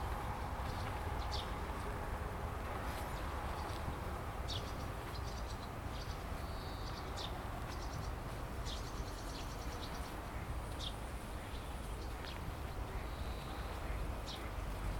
Звуки птиц, велосипедист, атмосферные звуки
Запись ZoomH2n